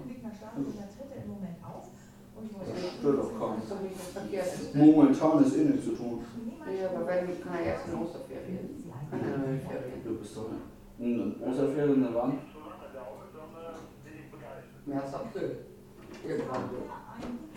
Wewelsfleth, Deutschland - ebbe & flut
gaststätte ebbe & flut, deichreihe 28, 25599 wewelsfleth
Wewelsfleth, Germany, 3 February 2010